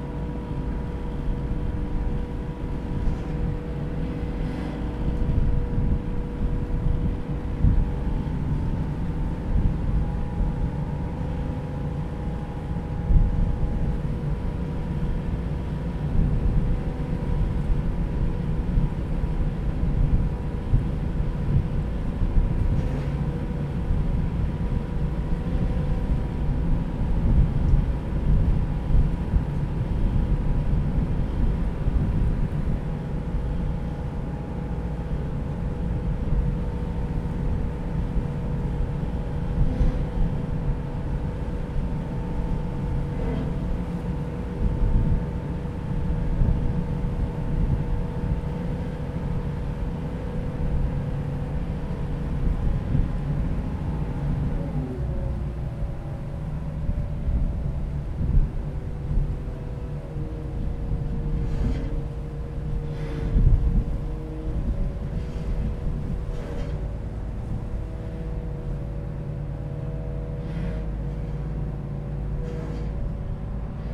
Wasted GTA
‘‘And because the character is always middle aged, it’s referring to the life that could have been and now stops to exist. So, it doesn’t necessary reflect on the life that existed but to the life that could have existed but now will never exist.’’
6 November, 12:36